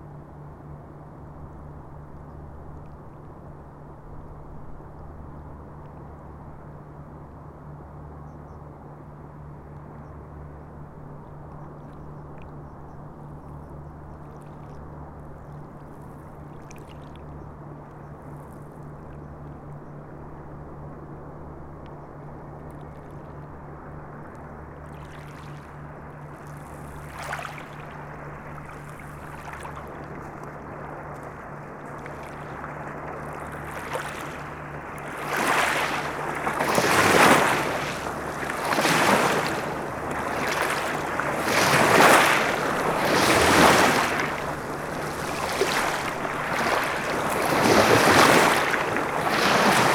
Kritzendorf, Danube - Donauwellen in Kritzendorf (schuettelgrat)
Wenn die Schiffe kommen, ist es mit der Sonntagsruhe vorbei. Und das ist gut so, denken sich die Kieselsteine
derweil die Uferpflanzen von den Wellen überwältigt werden.
(rp)